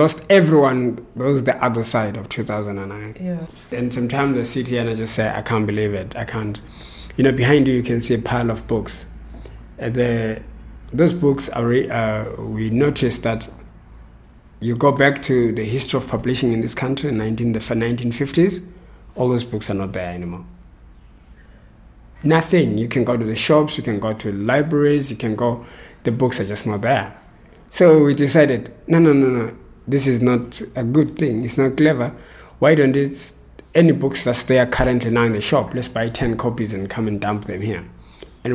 Amakhosi Cultural Centre, Makokoba, Bulawayo, Zimbabwe - Empty archives...
… the same counts for books published in the country, Cont continues… “what are we doing…?! … we are not capturing our own footsteps for future generations…”
29 October 2012